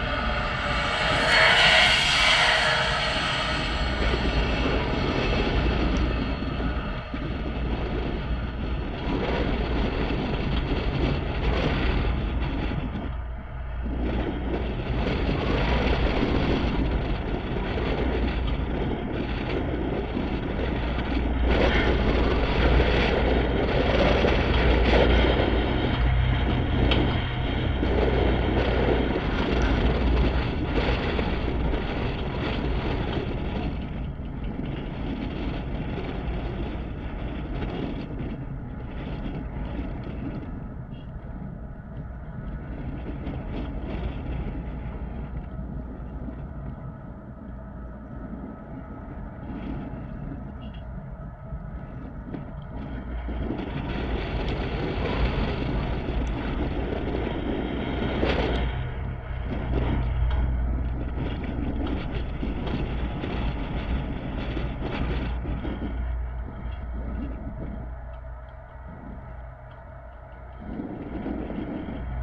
Vėžpievio g., Ringaudai, Lithuania - Street name sign in strong wind
4 contact microphone composite recording of a street sign. Strong wind is blowing against the metal plates, causing violent turbulent noises, with underlying resonant tone and noises from passing cars.